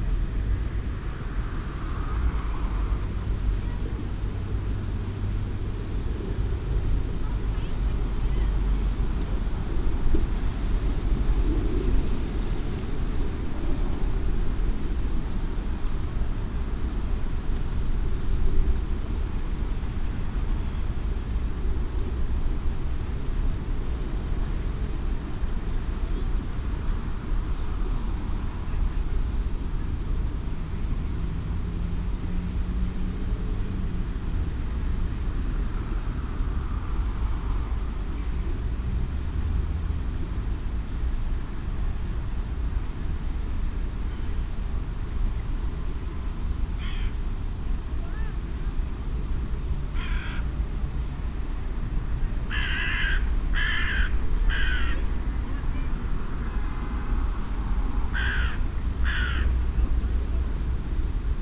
Vilnius, Lithuania, moving ice at Zverynas Bridge
Moving ice on river. Recorded with omni mics